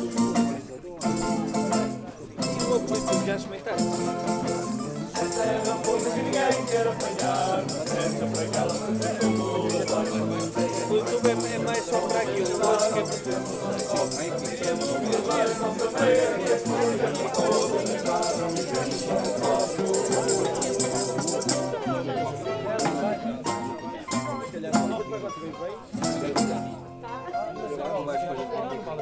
jantar em Trás-os-Montes
27 August 2010, 21:00